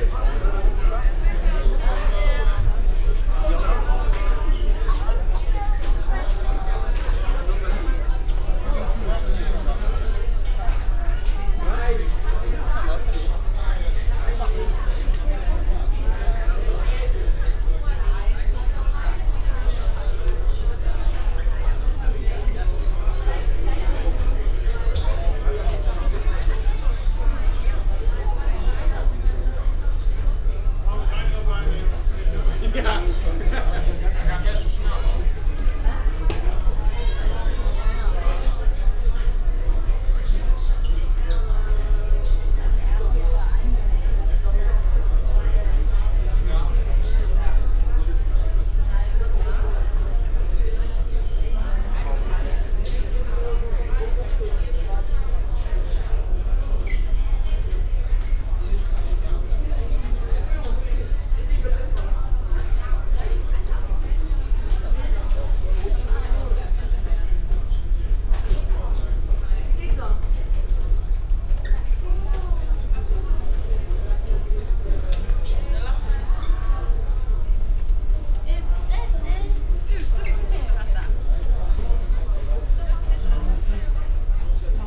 puttgarden, on ferry
on the ferry from puttgarden to roedby denmark - travellers invading the ship, anouncements in the distance, the hum of the motors
soundmap d - social ambiences and topographic field recordings
Fehmarn, Germany, August 2010